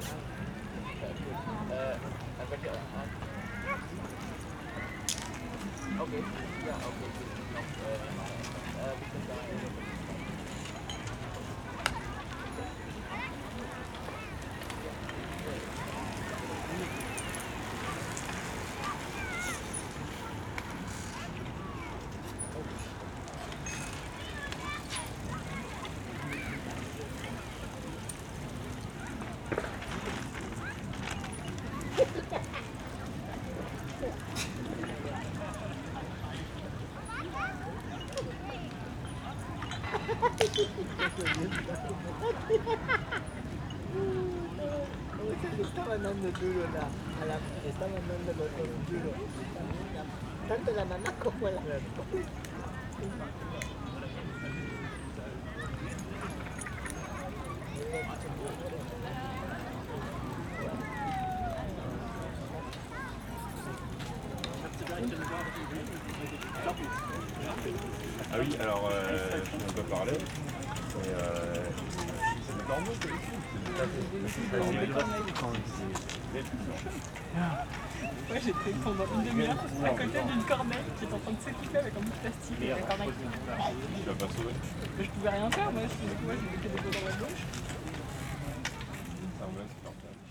Tempelhofer Feld, Berlin, Deutschland - entrance Oderstr., ambience
entrance to the Tempelhof air field at Oderstraße. closing call of the nearby public pool, people entering and leaving the area, ambience.
(SD702, Audio Technica BP4025)
Berlin, Germany, 21 August 2012, 19:30